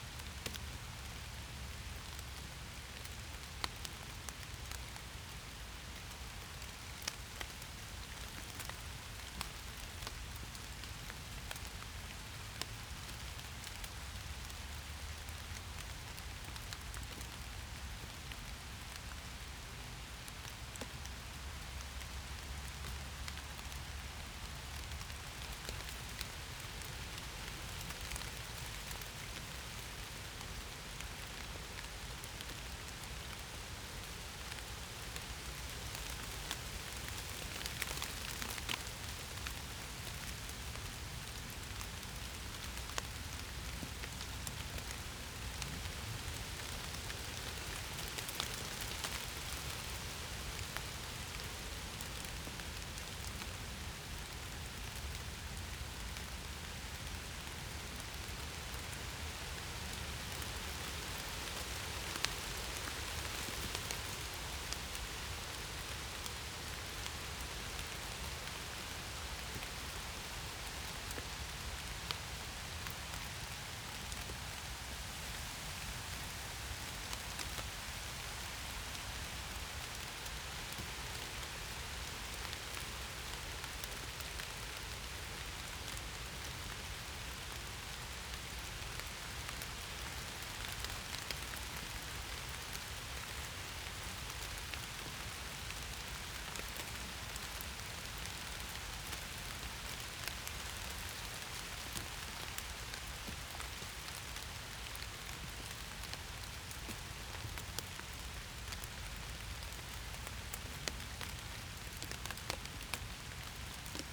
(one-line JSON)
{"title": "Rain while sheltering under oak and birch trees, Akazienstraße, Mühlenbecker Land, Germany - Sheltering under oak and birch trees as the rain sets in", "date": "2021-09-15 15:14:00", "description": "My cycle ride cut short by the weather; rain and wind on oak and birch leaves", "latitude": "52.62", "longitude": "13.37", "altitude": "33", "timezone": "Europe/Berlin"}